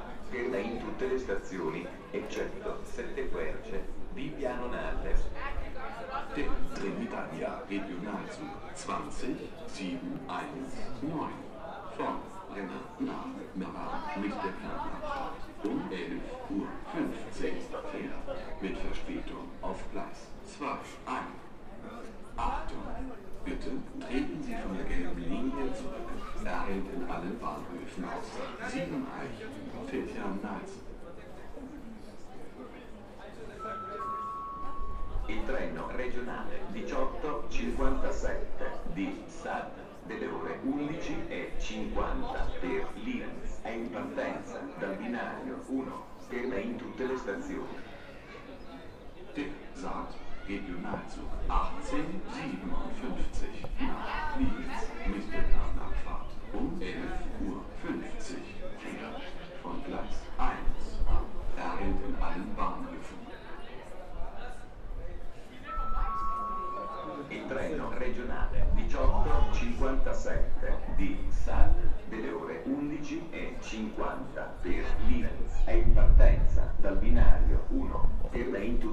Anouncements, Franzensfeste, Bozen, Italien - Station Franzensfeste
Waiting for the train from Bologna. The anouncements in Italian and German are repetetive. A local train is coming. A train drives through the station. Birds are singing. Young students are chatting. A siren, the usual saturday noon test. Some wind. There is a cut where one train became to loud, as I hope quite audible.
Fortezza BZ, Italy